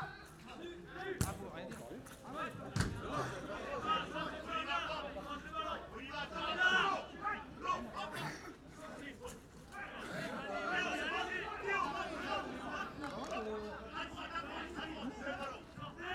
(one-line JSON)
{"title": "Rue de Sorel, Précy-sur-Oise, France - L'essentiel est invisible pour les yeux", "date": "2022-01-15 11:15:00", "description": "Match de championnat de France / poule Nord de Cécifoot opposant Précy-sur-Oise et Schiltigheim.\nBlind foot match of the French League / Nord pool, opposing Précy-sur-Oise and Schiltigheim.\nZoom H5 + clippy EM272", "latitude": "49.21", "longitude": "2.38", "altitude": "36", "timezone": "Europe/Paris"}